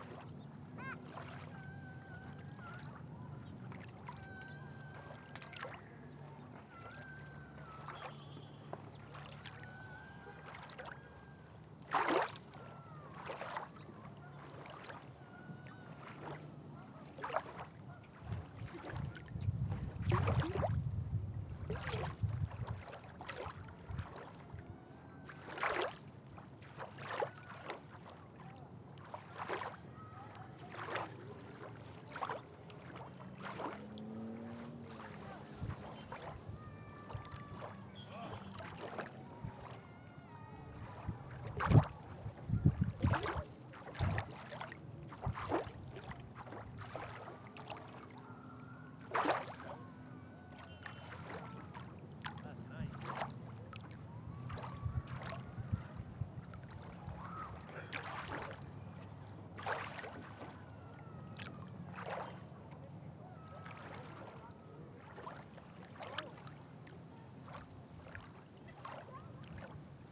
Matoska Park - Waves hitting the boat ramp
The microphone was place a few feet from the water line as waves lapped against the boat ramp.
Minnesota, United States, 14 May 2022, 20:15